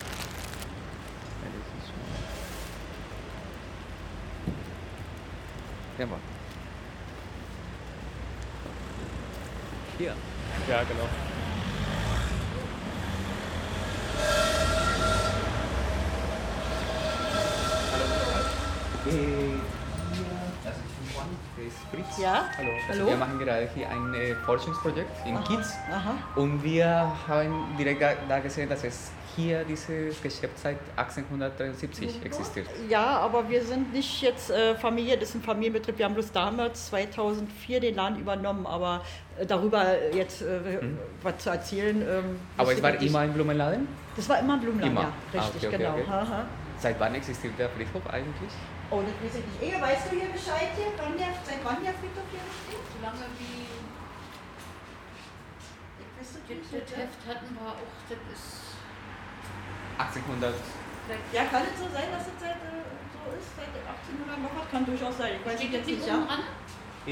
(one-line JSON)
{"title": "Wollankstraße, Soldiner Kiez, Berlin, Deutschland - Wollankstraße 60, Berlin - At the flower shop next to St. Elisabeth cemetery II", "date": "2012-11-10 11:50:00", "description": "Wollankstraße - Im Blumenladen neben dem Eingang zum Sankt-Elisabeth-Kirchhof II.", "latitude": "52.56", "longitude": "13.39", "altitude": "43", "timezone": "Europe/Berlin"}